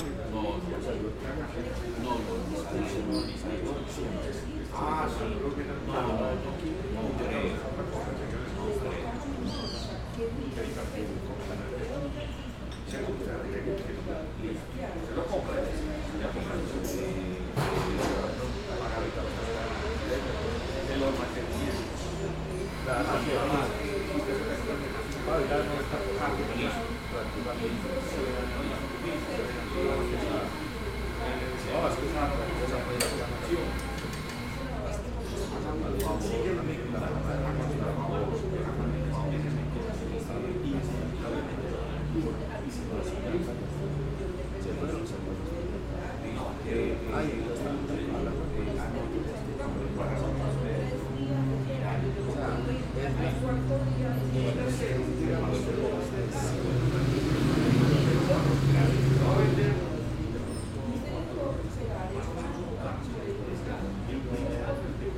Cra., Sabaneta, Antioquia, Colombia - Café Zendaya Studio
Café Zendaya Studio en la parte de afuera, en un día nublado.
Sonido tónico: Conversaciones, pájaros.
Señal sonora: Batidoras, licuadoras, tazas.
Se grabó con una zoom H6, con micrófono XY.
Tatiana Flórez Ríos - Tatiana Martínez Ospino - Vanessa Zapata Zapata
Valle de Aburrá, Antioquia, Colombia, 5 October 2021